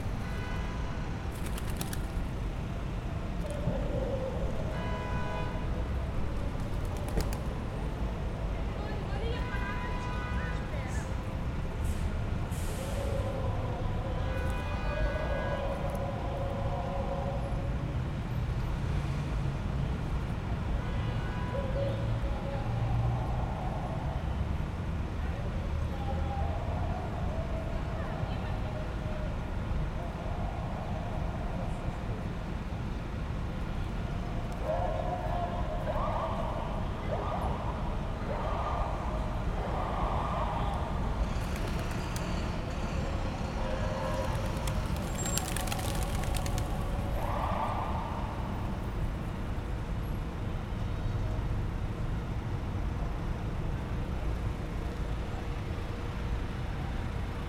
Vitória, Portugal - Cordoaria Garden, Porto
João Chagas Garden - popularly known as the Cordoaria Garden in Porto.
Sounds of seagulls and pigeons eating bread crumbs.
Traffic and the sound of an ambulance.
Zoom H4n
December 2014